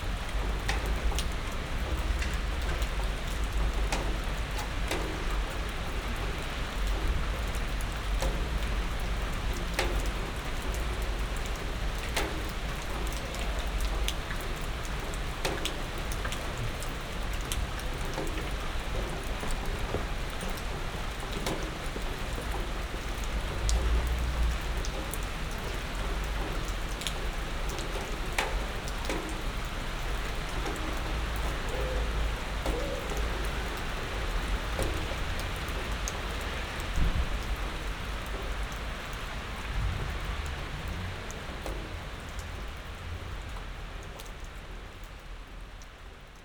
under porch of the office
the city, the country & me: july 18, 2012
99 facet of rain
Berlin, Germany, July 2012